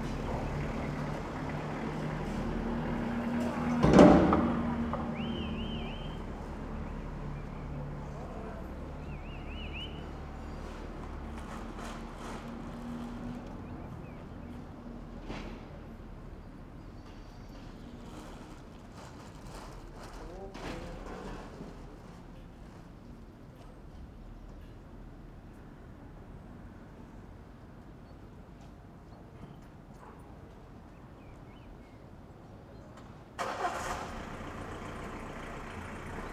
taormina, piazza IX.aprile - piazza IX.aprile, morning
same place in the morning, clean up service
Taormina ME, Italy